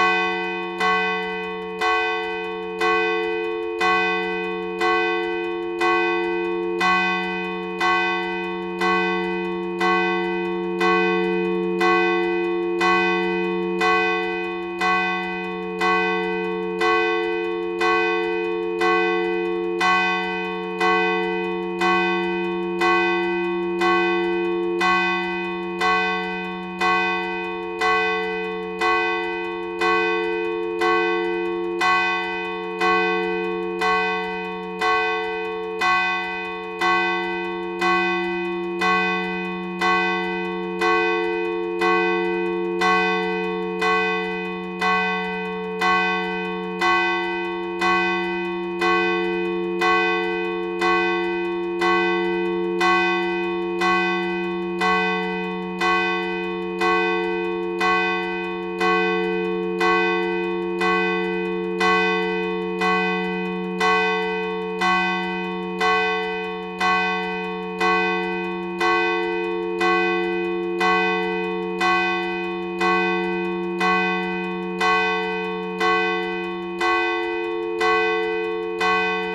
Rue de l'Abbé Fleury, Saint-Victor-de-Buthon, France - St-Victor de Buthon - Église St-Victor et St-Gilles
St-Victor de Buthon (Eure-et-Loir)
Église St-Victor et St-Gilles
L'Angélus - Tintement
Prise de son : JF CAVRO